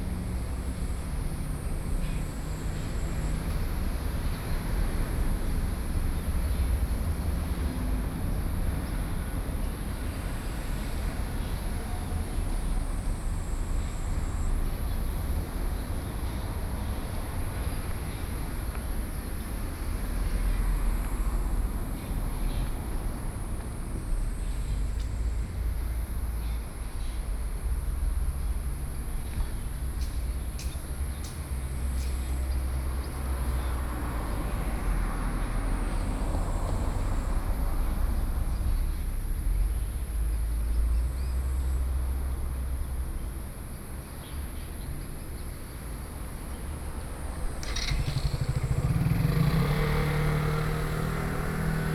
接天宮, 頭城鎮外澳里 - In the temple
In the temple, Very hot weather, Traffic Sound